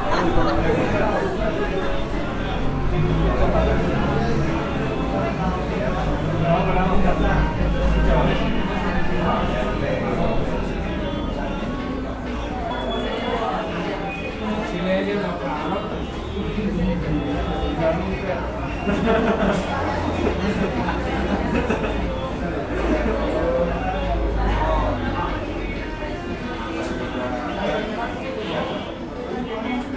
Cra 88 con Cll, Medellín, Antioquia, Colombia - Ambiente Zona Estudios Facultad de Comunicación Universidad de Medellín
Descripción: Cubículos de la Facultad de Comunicación de la Universidad de Medellín.
Sonido tónico: gente hablando, risas y música.
Señal sonora: bolsos chocando con sillas, teclado de computador, pitos de vehículos, tono de notificaciones de un celular y vehículos transitando.
Técnica: grabación con Zoom H6 y micrófono XY.
Alejandra Flórez, Alejandra Giraldo, Mariantonia Mejía, Miguel Cartagena, Santiago Madera.
Región Andina, Colombia, 24 September 2021